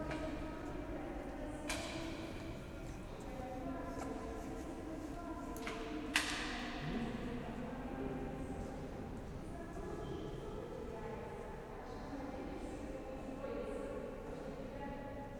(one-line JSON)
{"title": "Lithuania, Vilnius, workers in cathedral", "date": "2012-11-06 14:00:00", "description": "cathedral ambience and workers noises", "latitude": "54.69", "longitude": "25.29", "altitude": "99", "timezone": "Europe/Vilnius"}